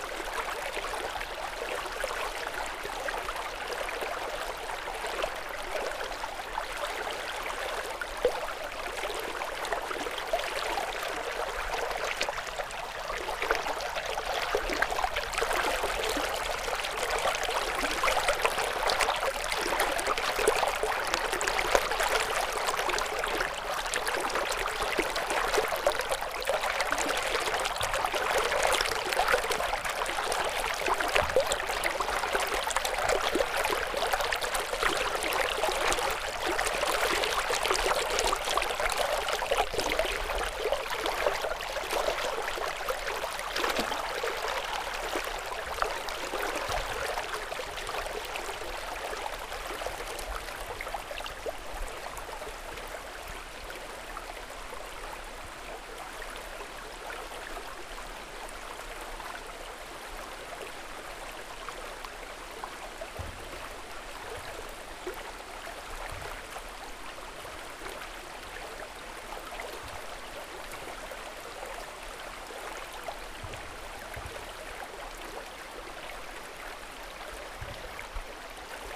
pure running water in a little river (center portugal)
Tallinn, Estonia, 10 October, 17:00